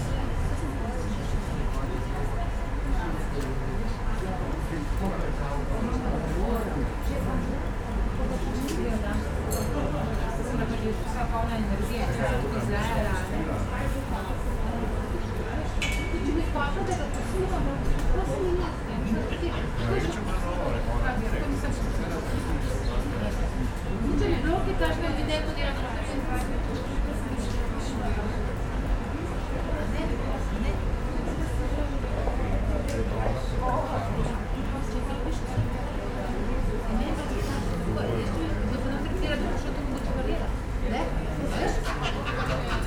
coffee break at a cafe opposite the market, watching market activity, ambience
(Sony PCM D50, DPA4060)